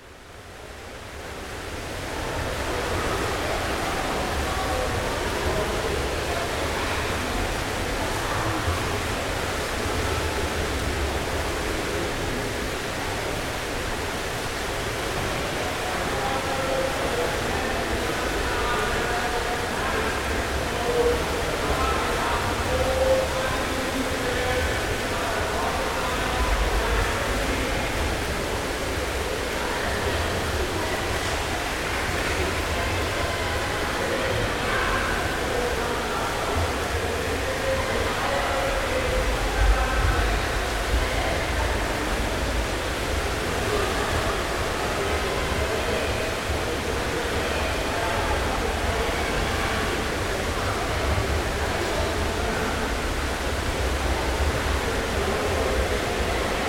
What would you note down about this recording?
general ambient noise in the arena with two large pools.